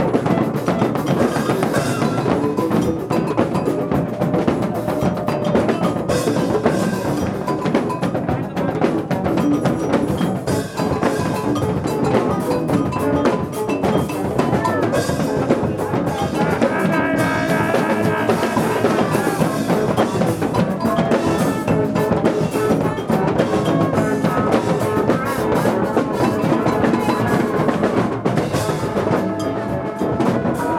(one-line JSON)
{"title": "letzte Session", "date": "2011-02-05 02:01:00", "description": "die letzte wunderbare wilde Session... letzte, allerletzte. wir sehen uns wieder!", "latitude": "52.48", "longitude": "13.43", "timezone": "Europe/Berlin"}